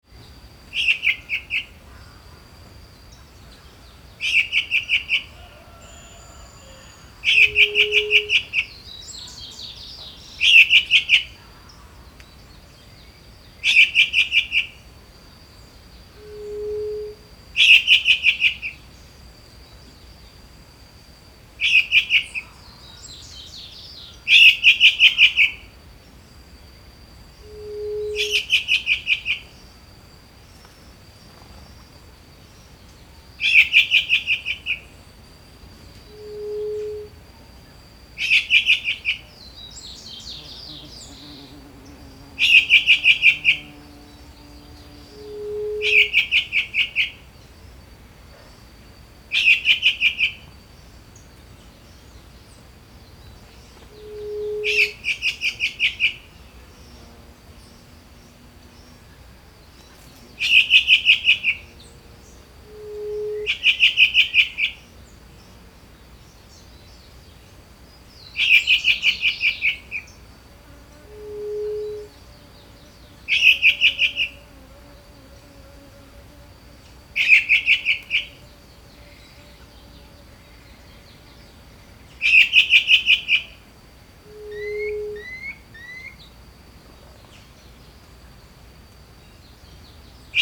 Rio Acima, Minas Gerais - Birds in the countryside at dawn, river in background in Brazil (Minas de Gerais)
Birds are singing at dawn in the countryside close to Rio Acima, MG, Brazil.
River in background.
Recorded by a MS Setup Schoeps CCM41+CCM8 in Cinela Zephyx Windscreen.
Recorder Sound Devices 633.
Sound Reference: BRA170304T06
Recorded at 5h30AM